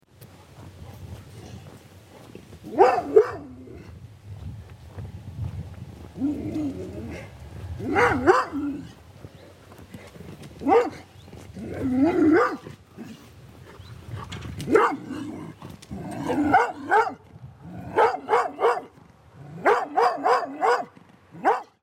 schadeland - auf der straße
Produktion: Deutschlandradio Kultur/Norddeutscher Rundfunk 2009